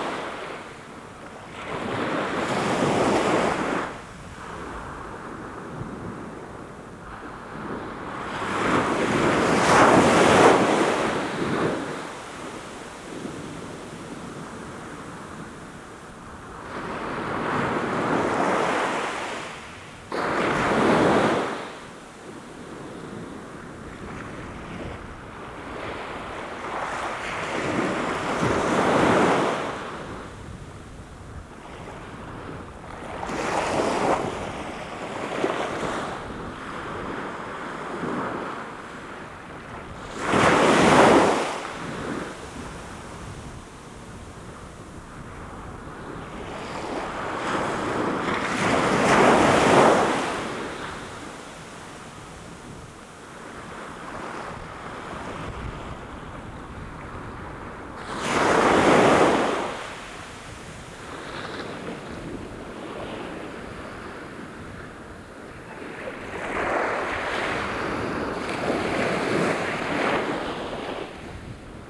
Scarborough, UK - Summer, North Bay, Scarborough, UK
Binaural field recording, waves washing upon the shore
7 July 2012